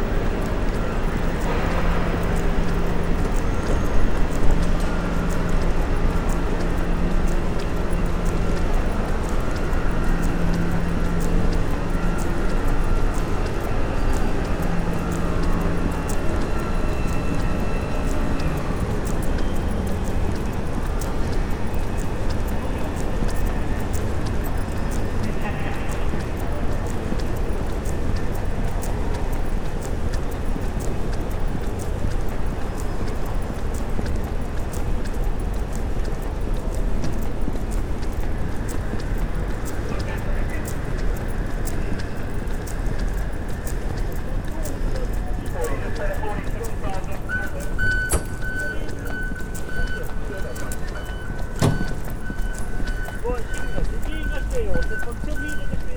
Brighton railway station concourse, atmosphere, enter through barriers and walk to the front carriage of the 14:50 London bound train on platform 4.
7 March 2009